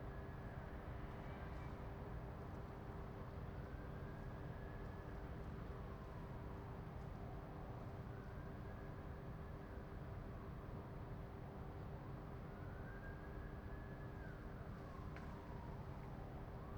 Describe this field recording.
Quiet night time, in a usually very crowded intersection. Dogs barking and an ambulance passing by.